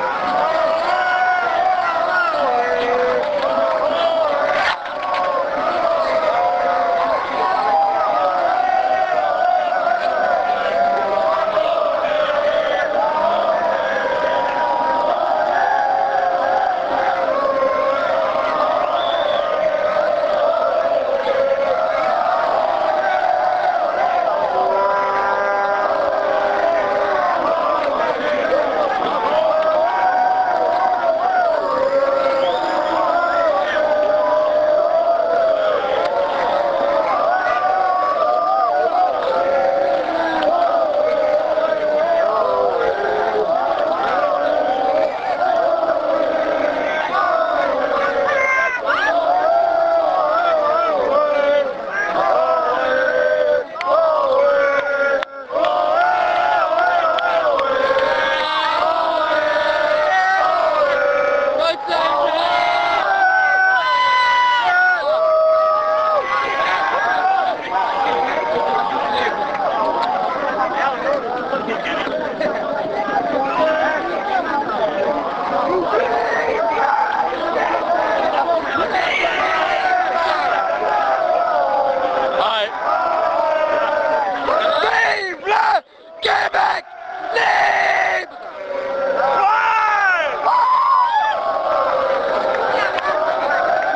Montreal: Parc Maisonneuve - Parc Maisonneuve
equipment used: Panasonic RR-US395
Chants et Cris de la foule apres le spectacle de la Fête-Nationale du Québec au Parc Maisonneuve